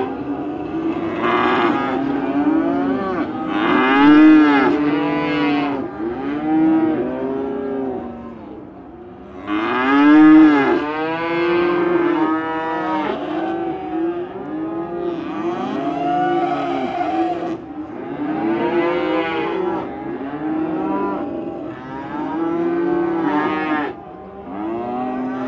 Cattle Market, St Joseph, MO, USA - Cows mooing in a stockyard in St Joseph, Missouri, USA.
Hundreds of cows mooing outside a cattle market, waiting in a stockyard for be sale and sent to some feedlot (for most of them). Sound recorded by a MS setup Schoeps CCM41+CCM8 Sound Devices 788T recorder with CL8 MS is encoded in STEREO Left-Right recorded in may 2013 in St Joseph, Missouri, USA.
14 May 2013, 10:00